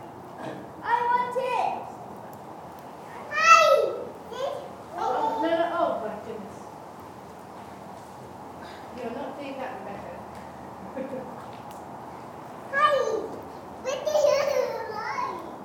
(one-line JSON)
{"title": "Inside the Octagon, Glen of the Downs, Co. Wicklow, Ireland - Chamber Orchestra", "date": "2017-07-29 11:37:00", "description": "This recording was made inside the Octagon: an old, Octagonal structure built by the Freemasons. Kids play, wind blows, fire burns, traffic passes way below at the bottom of the valley along the N11. The recorder is a lovely old wooden one belonging to Jeff. Lower notes are harder to get, and the wind kept blowing into the microphones, so the recording's not pristine. You can hear the strange acoustic of the Octagon. Recorded with the EDIROL R09.", "latitude": "53.14", "longitude": "-6.12", "altitude": "205", "timezone": "Europe/Dublin"}